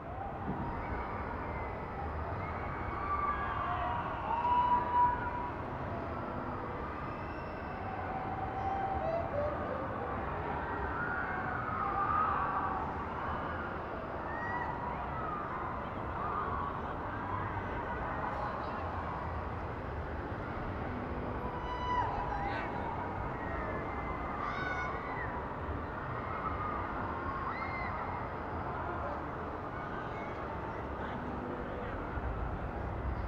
berlin, voltairestr. - distant christmas market
sunday evening, sound of the nearby christmas market in an inner courtyard